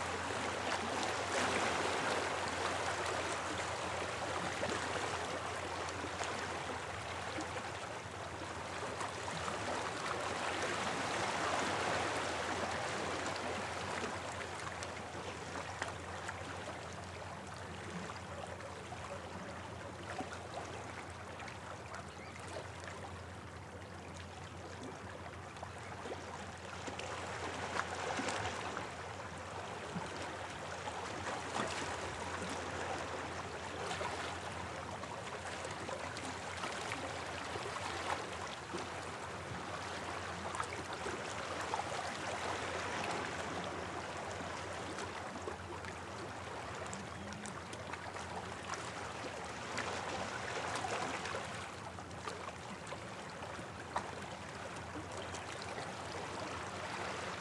Newton-by-the-Sea, Northumberland, UK - Sea Recording at Football Hole
Stereo MS recording of the incoming tide at Football Hole in Northumberland
Alnwick, Northumberland, UK, 20 July 2014, ~16:00